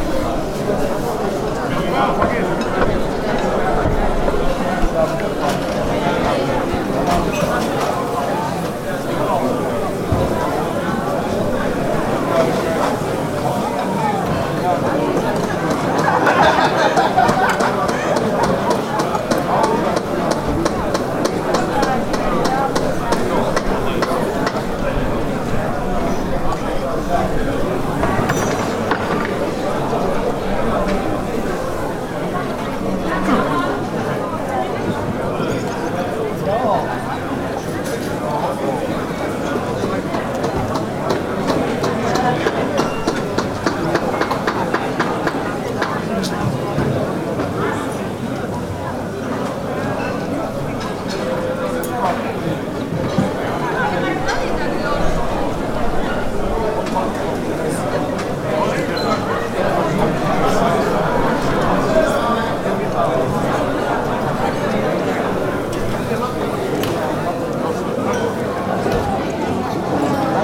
hupperdange, duarefstrooss
On the street during a public city venue day. The vivid sound of many voices and walking people inside several food and sale stands and information points.
Hupperdange, Duarrefstrooss
Auf der Straße während einem Ortsfest. Das lebendige Geräusch von vielen Stimmen und umher laufende Menschen an mehreren Essens- und Verkaufsständen und Informationspunkten. Aufgenommen von Pierre Obertin während eines Stadtfestes im Juni 2011.
Hupperdange, Duarrefstrooss
Dans la rue pendant une fête locale. Le bruit vivant de nombreuses voix et des gens qui courent dans toutes les directions, sur des stands d’alimentation, de vente et d’information. Enregistré par Pierre Obertin en mai 2011 au cours d’une fête en ville en juin 2011.
Project - Klangraum Our - topographic field recordings, sound objects and social ambiences
Luxembourg, 2 August 2011, 18:32